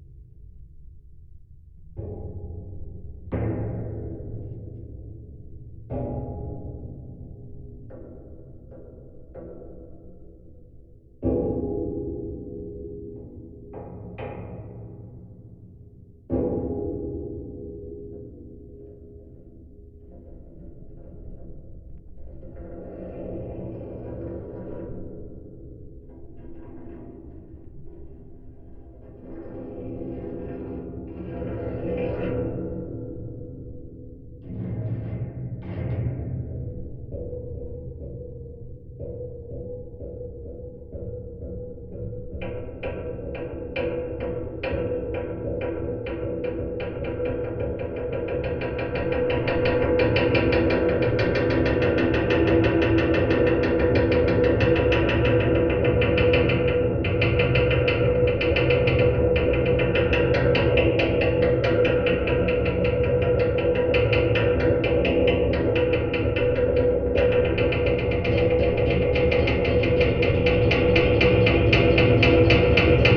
SBG, Puigneró, antigua fábrica - chimenea
Activación de la única gran chimenea que aún se conserva en el tejado de la fábrica.